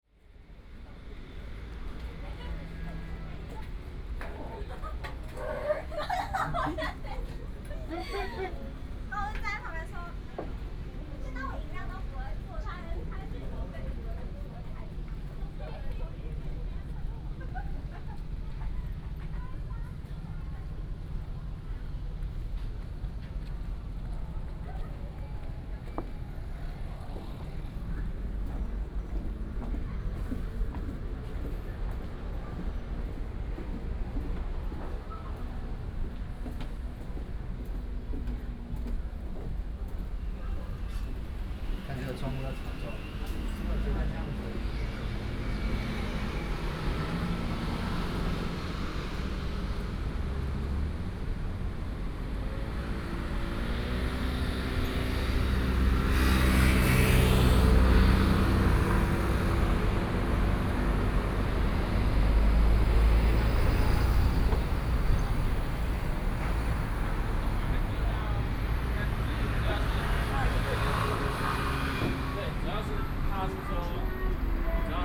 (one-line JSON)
{"title": "滬尾漁港, Tamsui Dist., New Taipei City - Walking along the fishing port", "date": "2016-04-07 20:41:00", "description": "Walking along the fishing port", "latitude": "25.17", "longitude": "121.44", "altitude": "5", "timezone": "Asia/Taipei"}